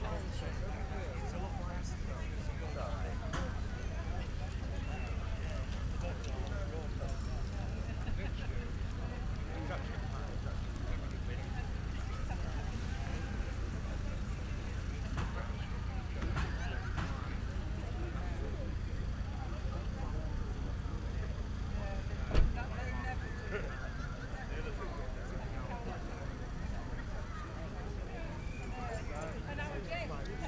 Red Way, York, UK - Farndale Show Soundscape ...
Farndale Show Soundscape ... sounds from the show ground ... stood close to a falconry display team ... lavalier mics clipped to baseball cap ... the bird calling is a lanner saker peregrine hybrid ... voices ... public address system ... dogs ... all sorts of everything ... etc ...